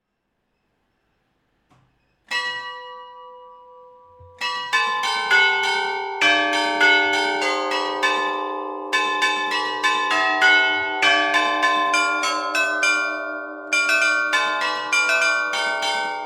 Rue Jules Hunnebelle, Aire-sur-la-Lys, France - Carillon - Aire-sur-la-Lys
Carillon du Beffroi d'Aire-sur-la-Lys (Pas-de-Calais)
Prise de sons à l'intérieur du Beffroi.